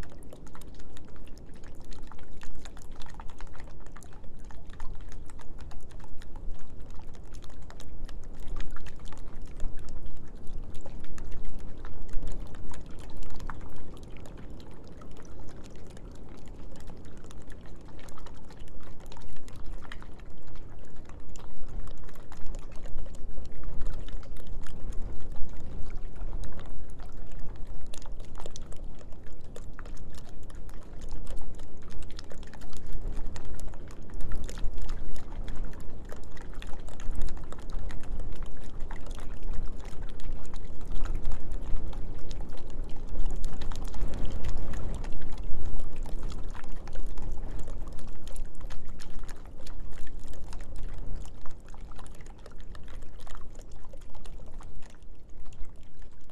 Griūtys, Lithuania, under the bridge
there are a few meters of non frozen water under the bridge
1 March, 15:30